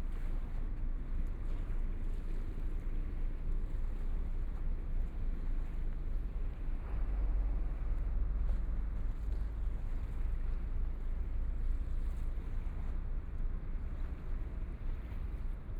Huangpu River, Shanghai - The sound of the waves
The sound of the waves, Many ships to run after, Binaural recording, Zoom H6+ Soundman OKM II
Shanghai, China, 28 November, 14:22